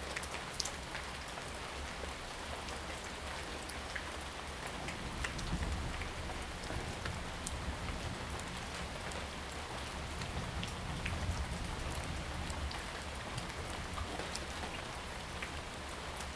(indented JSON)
{
  "title": "富陽自然生態公園, 大安區, Taipei City - Rain and Thunder",
  "date": "2015-07-04 18:16:00",
  "description": "Thunder, in the park, Rainy Day, Abandoned military trenches\nZoom H2N MS+XY",
  "latitude": "25.02",
  "longitude": "121.56",
  "altitude": "24",
  "timezone": "Asia/Taipei"
}